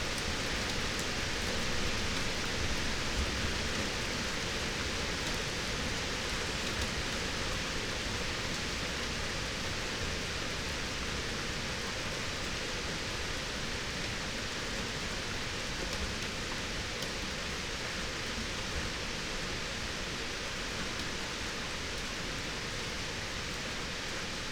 11:25 Berlin Bürknerstr., backyard window, short early autumn thunderstorm and rain
(remote microphone: AOM5024HDR | RasPi Zero /w IQAudio Zero | 4G modem
Berlin, Germany, September 2021